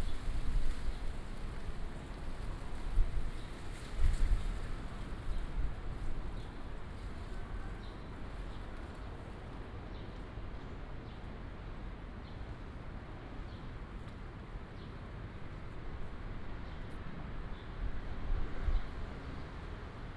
Ln., Changhe St., North Dist., Hsinchu City - In the alley
In the alley, wind and Leaves, Bird, The distance fighter flew through, Binaural recordings, Sony PCM D100+ Soundman OKM II